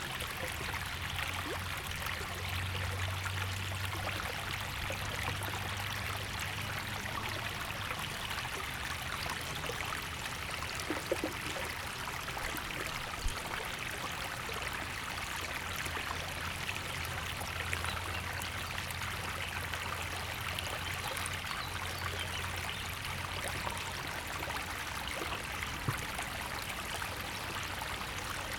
провулок Академіка Янгеля, Вінниця, Вінницька область, Україна - Alley12,7sound8thesoundoftheriver
Ukraine / Vinnytsia / project Alley 12,7 / sound #8 / the sound of the river